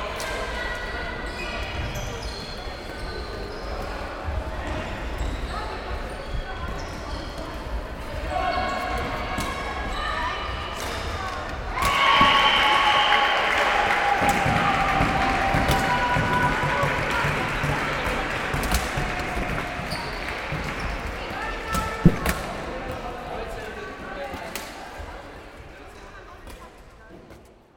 SIbeliova Praha, Česká republika - Floorball Match
321 teams from 14 countries take part in the 10th International Youth Floorball Tournament, the Prague Games 2013. The youth match at the Tatran Sport Center in Stresovice.